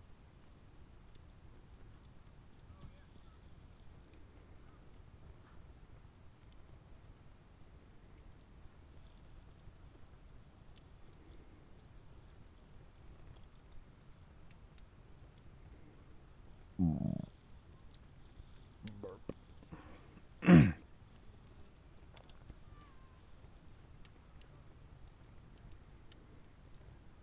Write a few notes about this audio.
Fire near the campground. Lone Pine, CA, just under Mt. Whitney.